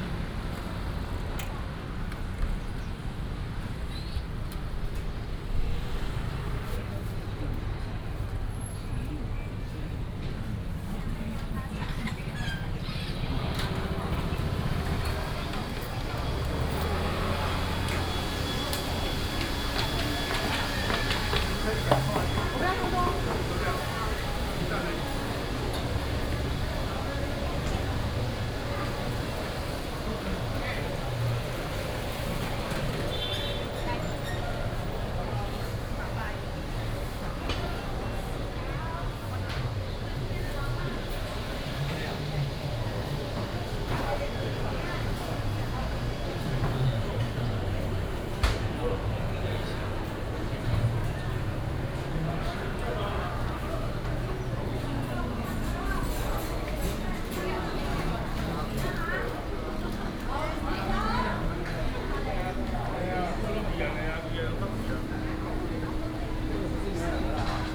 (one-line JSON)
{"title": "龍安黃昏市場, Taoyuan Dist. - Walking in the traditional market", "date": "2017-07-15 18:08:00", "description": "Walking in the traditional market, Traffic sound", "latitude": "24.99", "longitude": "121.28", "altitude": "102", "timezone": "Asia/Taipei"}